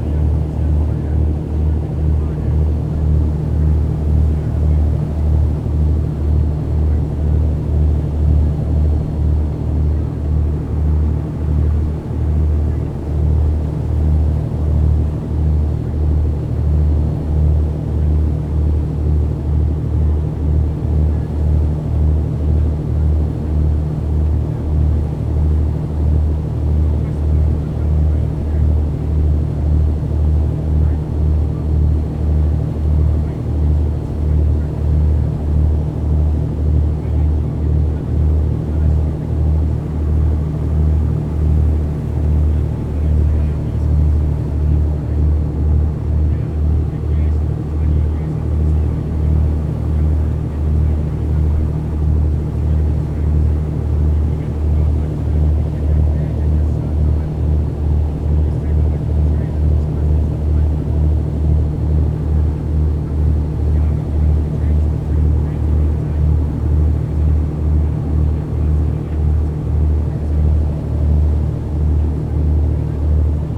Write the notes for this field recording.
Grey seal cruise ... Inner Farne ... background noise ... open lavalier mics clipped to baseball cap ...